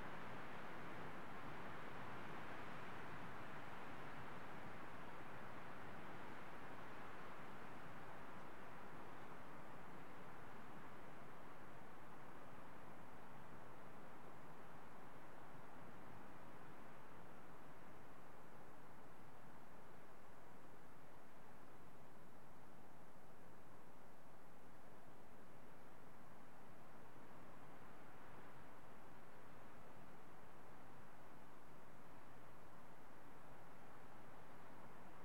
Caminho Lagoinha, Portugal - Lagoa Funda
This lagoon is located within the volcanic caldera of the Sierra de Santa Bárbara at about 900 meters altitude. It is surrounded by a varied endemic vegetation of Macaronesia. A windy day.
Recorded with Zoom Hn4 Pro.
27 September 2019, 11:06